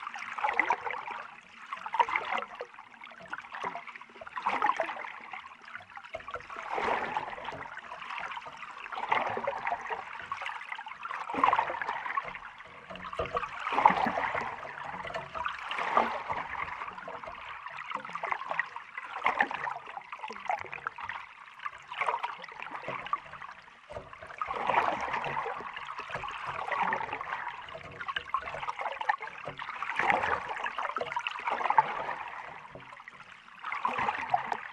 nida pier hydrophone under water - Nida pier hydrophone under water
Recorded in Lithuania in October 2008.